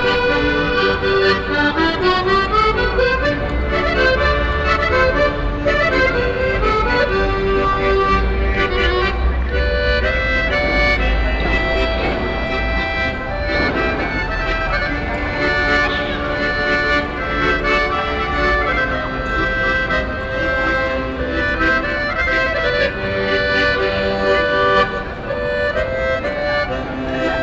Altstadt, Bremen, Deutschland - bremen, in front of st.petri dom
At he square in front of the St. petri Dom. The sound of an accordeon player who sits in front of the cathedral stairways. In the distance the sound of metal pipes handled by construction workers on the market place.
soundmap d - social ambiences and topographic field recordings
Bremen, Germany, 13 June